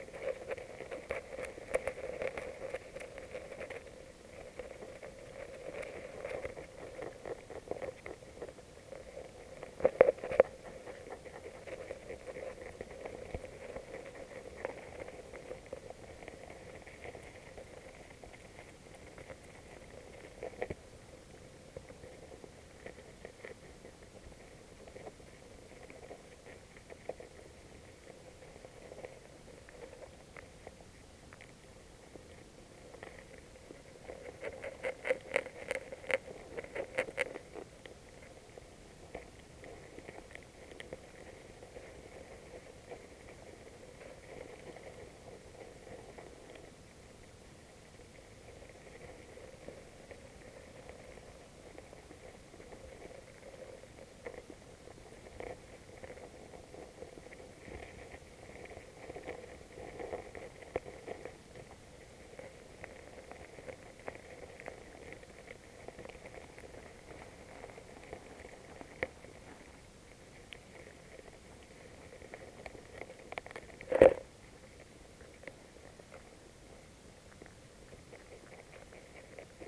Poncey-sur-l'Ignon, France - Seine spring and gammarids
This is the Seine river spring. The river is 777,6 km long. I walked along it during one year and 3 month, I Went everywhere on it. This recording is the first centimeter of the river. It's the Seine spring. In the streamlet, there's a lot of gammarids rummaging into the water, the ground and the small algae. This is the precious sound of the spring.